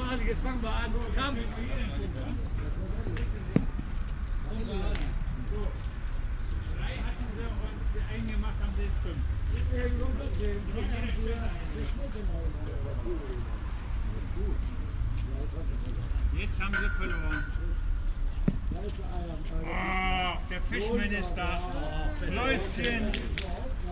erkrath, leo heinen platz - boule spiel
tägliches, morgendliches spielritual einer gemeinschaft älterer menschen - aufnahme im frühjahr 07
project: :resonanzen - neanderland soundmap nrw: social ambiences/ listen to the people - in & outdoor nearfield recordings
leo heinen platz, boule platz, 18 April 2008, 13:39